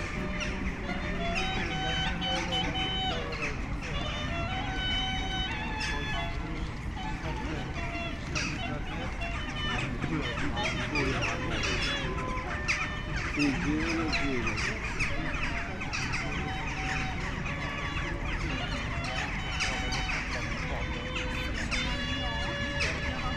M566+FQ Pristina - streetmusic clarinet
Crows and a wonderful street musician (clarinet) occupy the acoustic space in a section of the pedestrian zone
Komuna e Prishtinës / Opština Priština, Kosova / Kosovo, February 2022